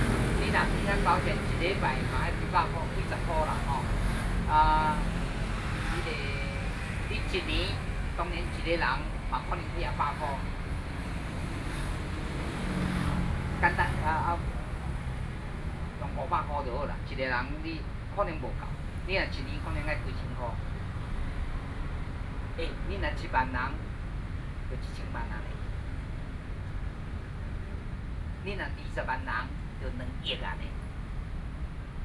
Legislative Yuan, Taiwan - Speech
Sitting in front of the Legislative Yuan and protesters Civic Forum, Sony PCM D50 + Soundman OKM II